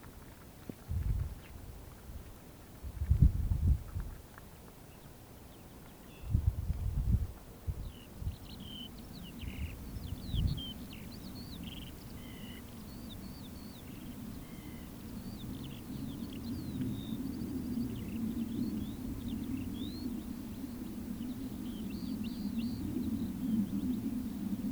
SeaM (Studio fuer elektroakustische Musik) klangorte - suedWestPunkt